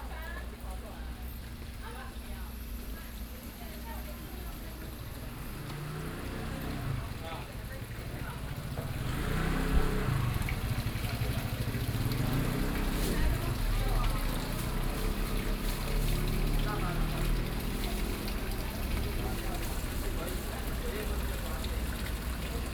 Walking in a small alley
Binaural recordings, Sony PCM D50